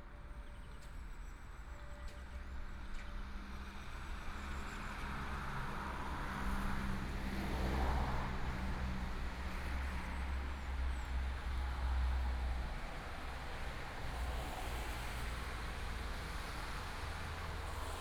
Bavariaring, Ludwigsvorstadt-Isarvorstadt - on the road
Walking on the road, Bells, Traffic Sound, The sound of traffic lights